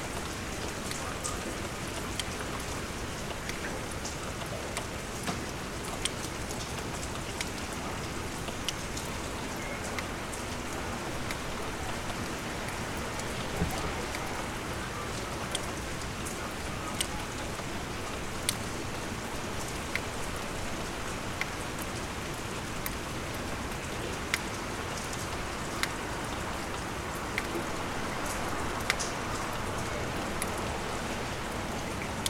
Rainstorm, Armstrong Ave
Rainstorm heard through the window - Armstrong Ave, Heaton, Newcastle-Upon-Tyne, UK